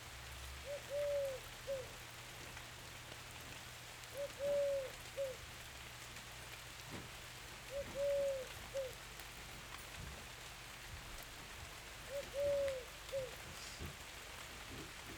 Lavacquerie, France - Wood Piegon
Wood Piegon and rain at the Esseres
Binaural recording with Zoom H6
20 August